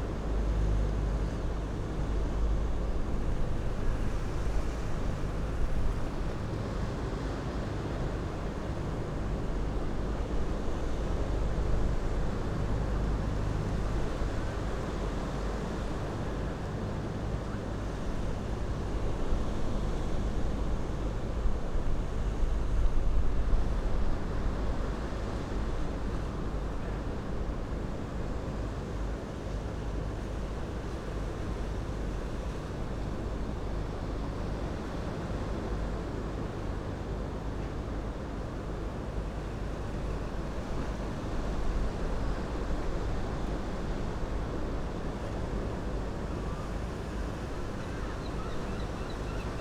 West Lighthouse, Battery Parade, UK - West Pier Lighthouse ...
West Pier Lighthouse Whitby ... lavalier mics clipped to bag ... soundscape from the top of the lighthouse ... student protest about climate change in the distance ...